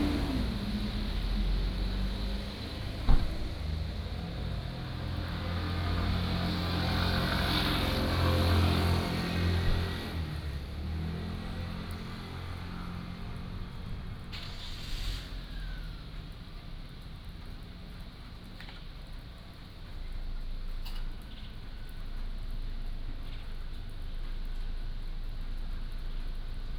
長濱村, Changbin Township - Small village
Small village, Rain, Traffic Sound